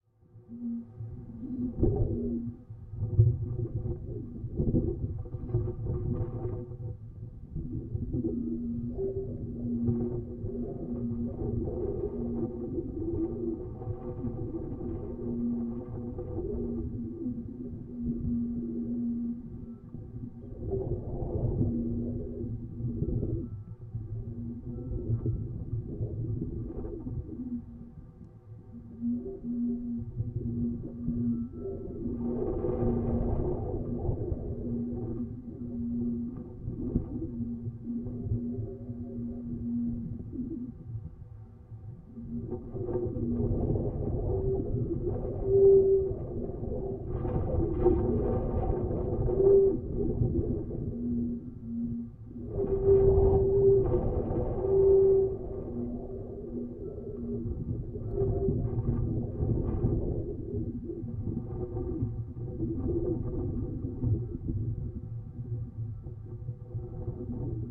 Hill88 whistling railing, Headlands CA
rusted holes in an old railing become flutes in the wind
California, United States of America